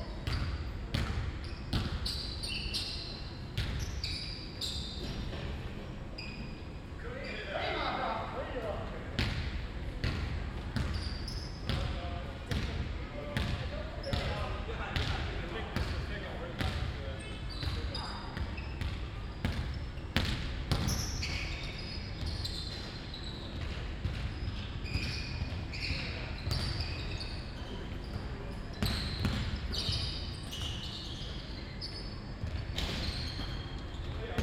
Some folks playing basketball at the Kiel University sports hall, squeaking shoes, bouncing balls, a few cheers, talking of some viewers with children, constant noise from the ventilation system.
Binaural recording, Zoom F4 recorder, Soundman OKM II Klassik microphone
Kiel, Germany, 26 May